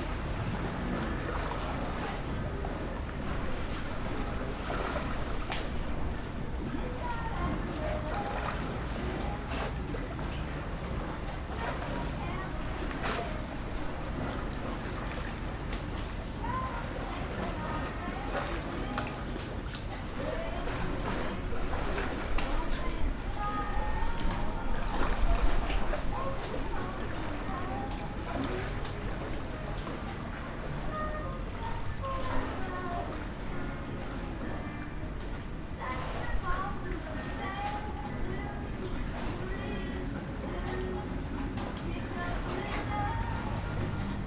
San Marco, Venezia, Italien - s. marco vallaresso
s. marco vallaresso, venezia
26 October 2009, 02:04